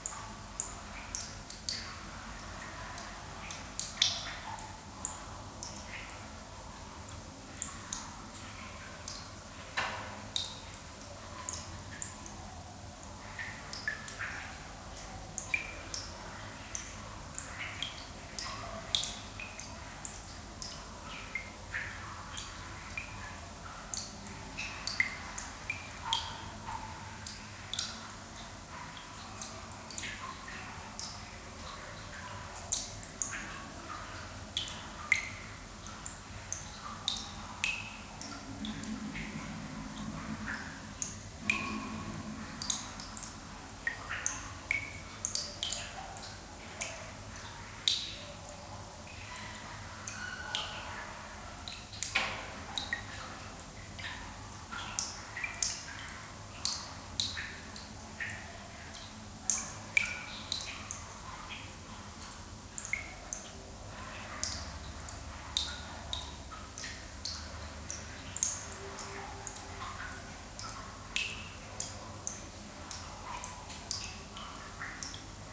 Père-Lachaise, Paris, France - Crematorium Cistern - Père Lachaise Cemetery
Recorded with a pair of DPA 4060s and a Marantz PMD661.
23 September 2016